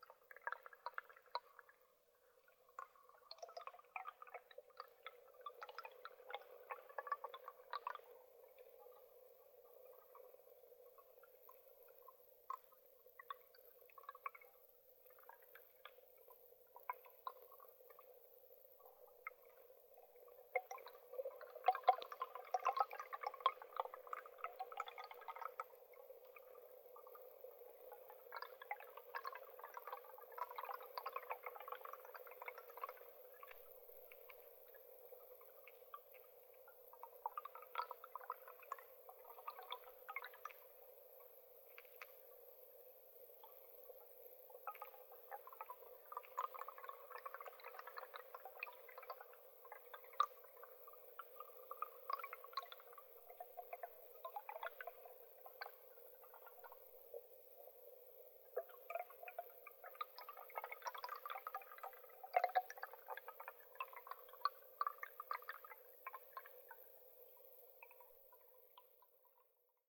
movements of waters on the bottom of the forest's lake
Lithuania, 24 September